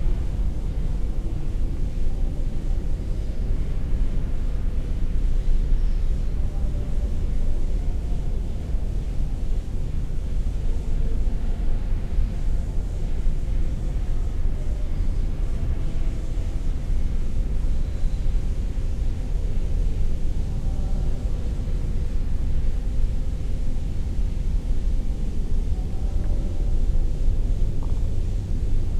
Kidricevo, Slovenia - disused factory resonance
this factory building is slowly being dismantled, but in the meantime a performance group is rehearsing a new theater piece in it. this however is recorded from far, far (hundreds of meters) down one passageway, in the heart of the building, with sounds of the rehearsal, the rest of the factory complex, and the world outside resonating in the air.
Kidričevo, Slovenia, 18 June 2012